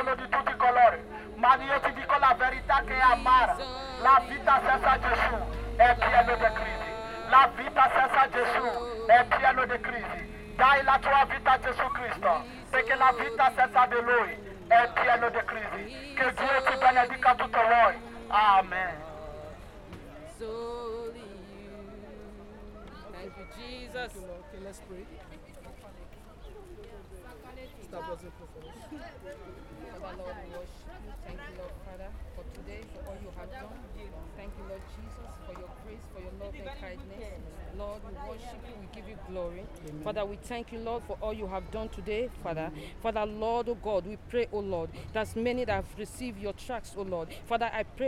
Turin - Italie
À l'entrée du marché - prédicatrices : "Give your life to Jesus Christ ! Do not die and go to hell !"